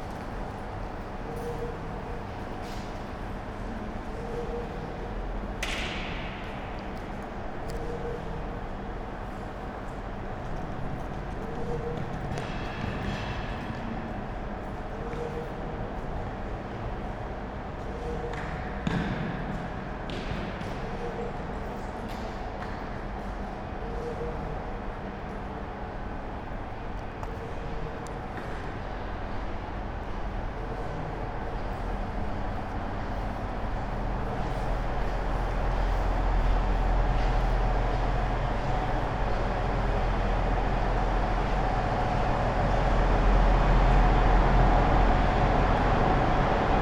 Maribor, station hall - afternoon ambience
place revisited
(Sony PCM D50, Primo EM172)